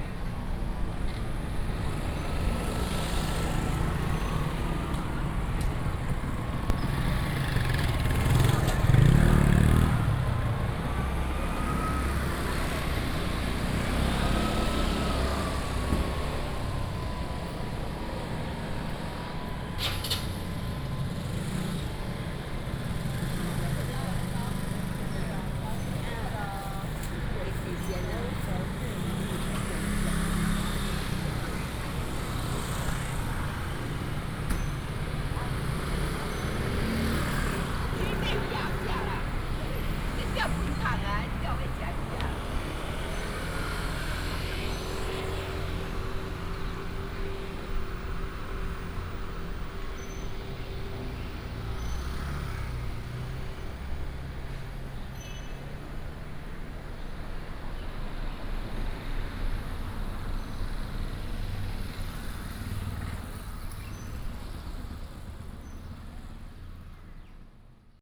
成功路, Xuejia Dist., Tainan City - Traditional market block
Traditional market block, traffic sound